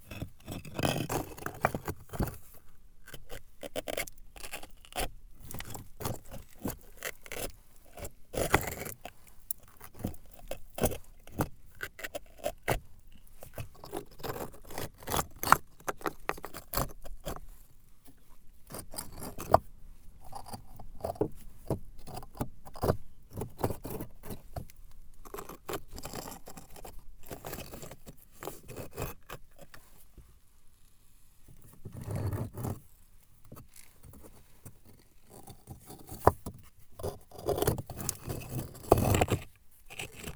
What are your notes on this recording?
The Lozere mounts. This desertic area is made of granite stones. It's completely different from surroundings. Here, I'm playing with the stones, in aim to show what is different with it. Especially, it screechs.